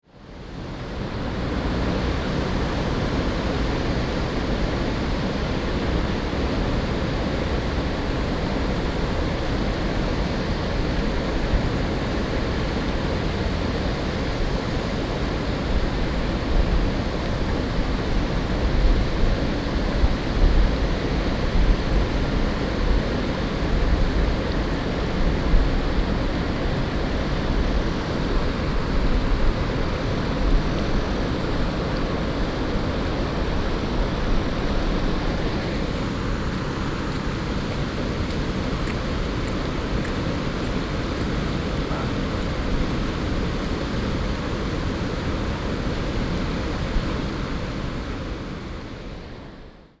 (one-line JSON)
{"title": "Wanli Dist., New Taipei City - Drainage channel", "date": "2012-06-25 17:53:00", "description": "Drainage channels of the nuclear power plant, Sony PCM D50 + Soundman OKM II", "latitude": "25.21", "longitude": "121.66", "altitude": "20", "timezone": "Asia/Taipei"}